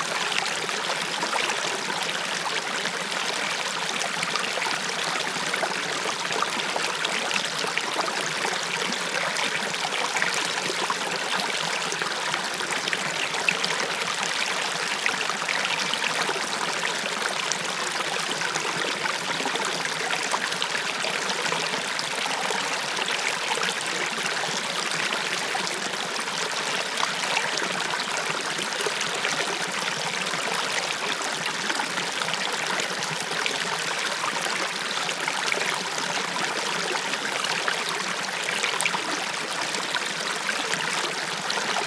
hoscheid, small stream schlänner
The sound of the small stream Schlänner, recorded in early spring while walking the Hoscheid Klangwanderweg - sentier sonore. A sign on the way asks you here to listen to the sound of the water.
Hoscheid, Kleiner Fluss Schlänner
Das Geräusch von dem kleinen Fluss Schlänner, aufgenommen im frühen Frühjahr beim Ablaufen des Klangwanderwegs von Hoscheid. Ein Zeichen auf dem Weg fordert dich hier auf, dem Geräusch des Wassers zu lauschen.
Hoscheid, petit ruisseau Schlänner
Le son du petit ruisseau nommé Schlänner, enregistré au début du printemps en promenade sur le Sentier Sonore de Hoscheid. Un panneau sur le bord du chemin vous appelle à écouter le son de l’eau.
Projekt - Klangraum Our - topographic field recordings, sound art objects and social ambiences
Hoscheid, Luxembourg, 3 June, ~19:00